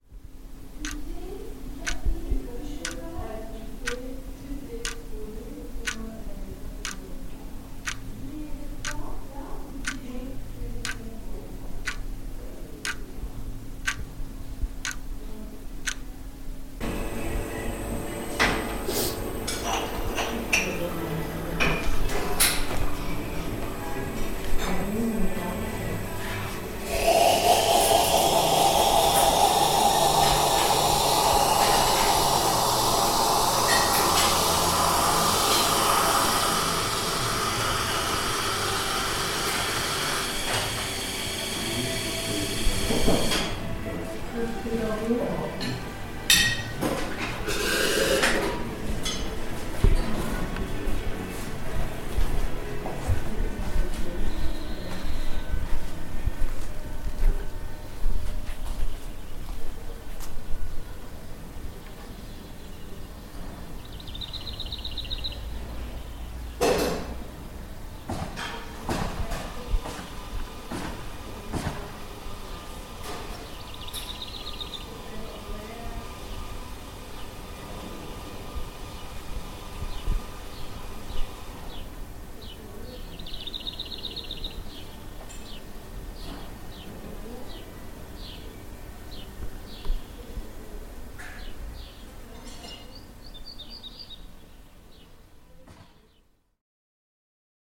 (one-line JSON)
{"title": "Quai de Bourne, Pont-en-Royans, Frankrijk - Breakfast on Clock", "date": "2013-07-05 08:19:00", "description": "Preparing breakfast on clock in Hotel de leau. Bonne Appetit. (Recorded with ZOOM 4HN)", "latitude": "45.06", "longitude": "5.34", "altitude": "201", "timezone": "Europe/Paris"}